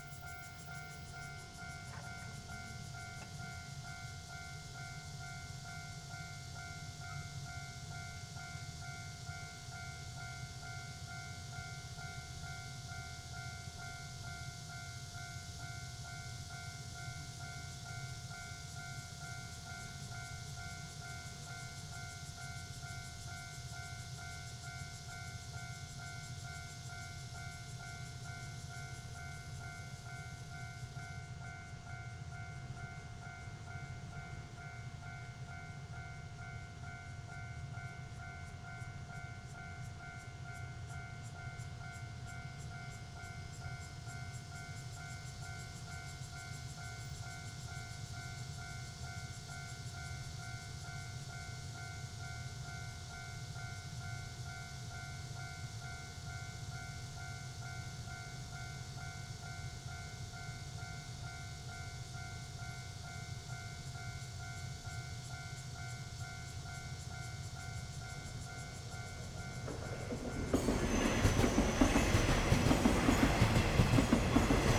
新中北路249巷18號, Zhongli Dist., Taoyuan City - Railway level crossing
Railway level crossing, Next to the tracks, Cicada cry, Traffic sound, The train runs through
Zoom H6 +Rode NT4